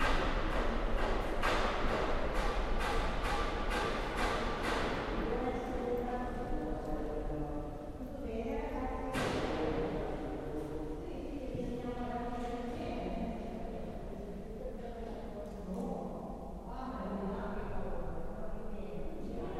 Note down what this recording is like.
Recorded with a Tascam DR-700 in a Church S. Giovanni, APM PLAY IN workshop 2016. First Day